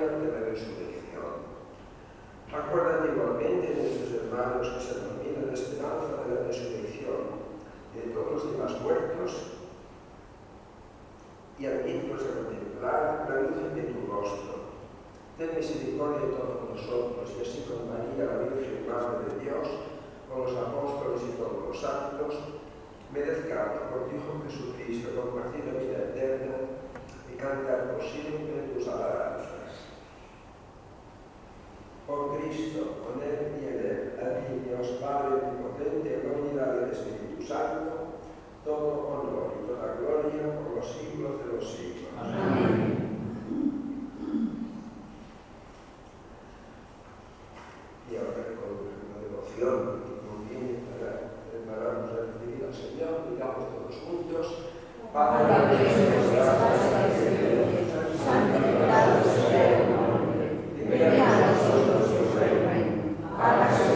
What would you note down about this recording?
Eucharistic celebration of the Roman Catholic Church on a conventional monday.